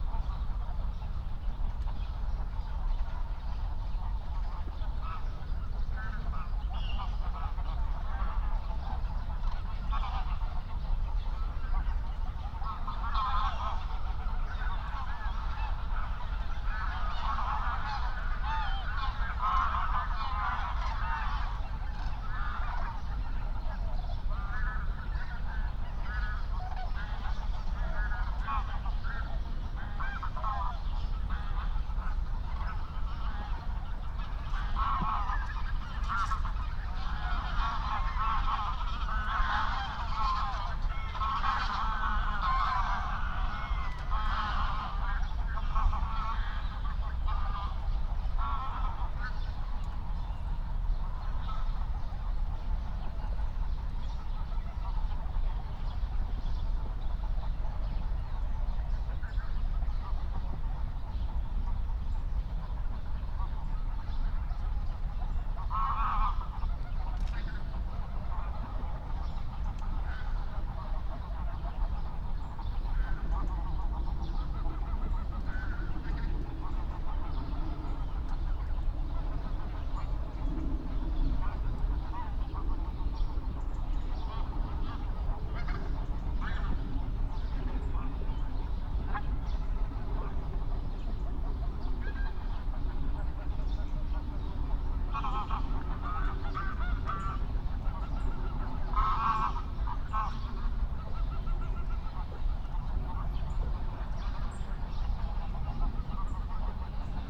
07:00 Berlin, Buch, Moorlinse - pond, wetland ambience